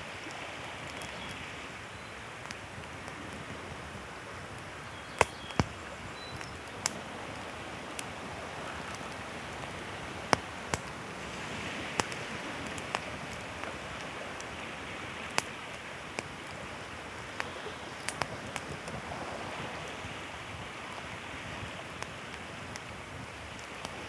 {"title": "bonfire-sea-birds, White Sea, Russia - bonfire-sea-birds", "date": "2014-06-10 21:36:00", "description": "bonfire-sea-birds.\nТреск костра, шум морских волн, пение птиц в лесу.", "latitude": "65.18", "longitude": "39.96", "altitude": "4", "timezone": "Europe/Moscow"}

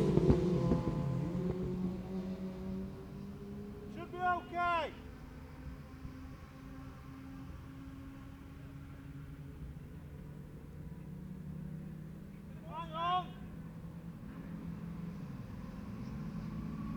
{"title": "Scarborough UK - Scarborough Road Races 2017 ...", "date": "2017-06-24 10:45:00", "description": "Cock o'the North Road Races ... Oliver's Mount ... Solo |Open practice ... red-flagged session ... ...", "latitude": "54.27", "longitude": "-0.40", "altitude": "142", "timezone": "Europe/London"}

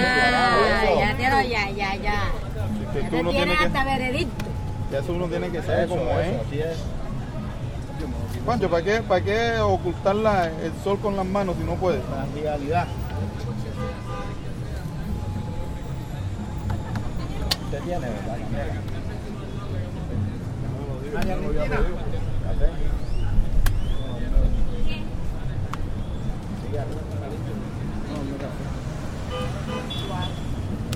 {"title": "Cartagena, Parque Centenario. Juego de Cartas", "date": "2010-02-14 21:04:00", "description": "Costeños juegan cartas junto al Parque Centenario. Tardes pasadas por ron y palabra.", "latitude": "10.42", "longitude": "-75.55", "altitude": "11", "timezone": "America/Bogota"}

